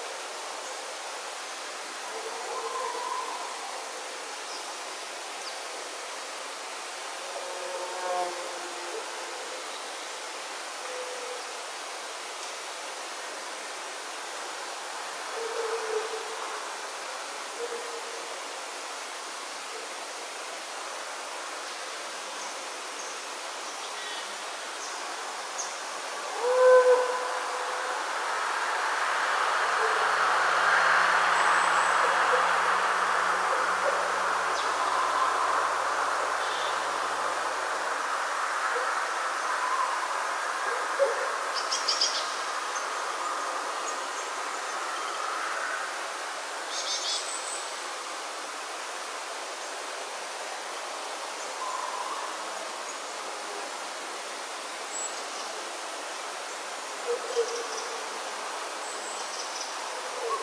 waking up in the morning in my tent at La Pommerie. Recorded during KODAMA residency September 2009
Saint-Setiers, France